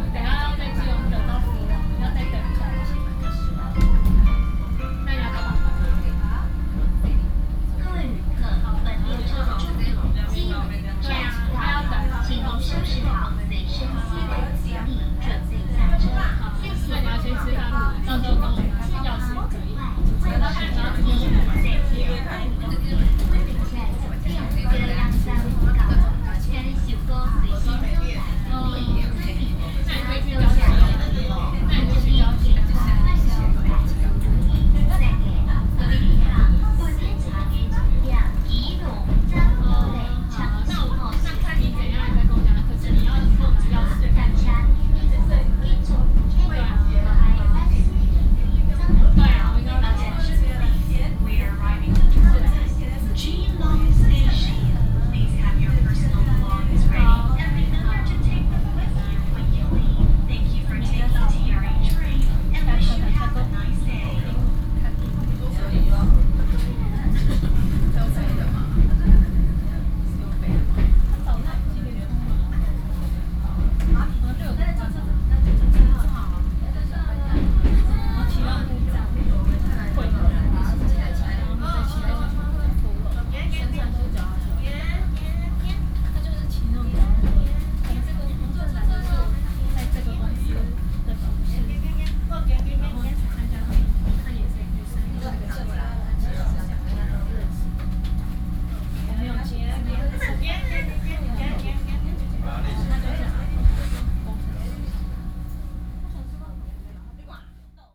Ren'ai, Keelung - On the train
On the train, Train message broadcasting, Binaural recordings